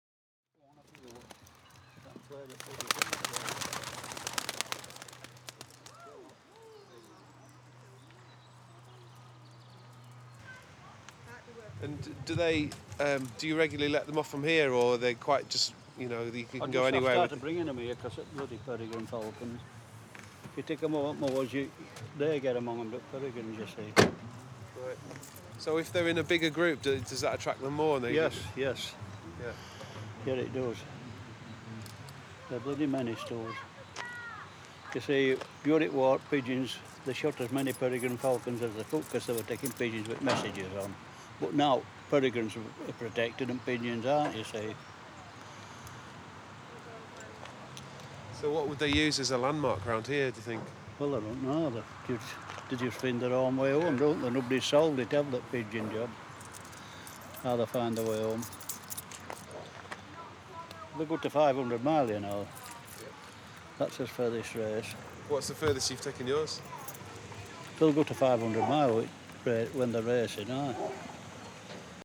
Walking Holme Pigeons
An old boy letting off homeing pigeons from a basket at the back of his car.